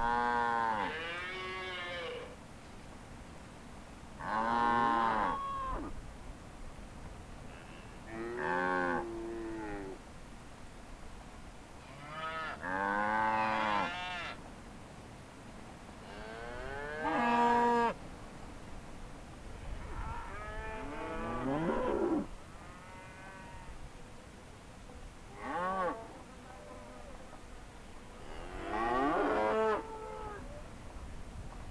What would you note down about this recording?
Metabolic Studio Sonic Division Archives: Herd of cattle grazing and mooing alongside highway, along with ambient sounds of cars and airplanes. Recorded on Zoom H4N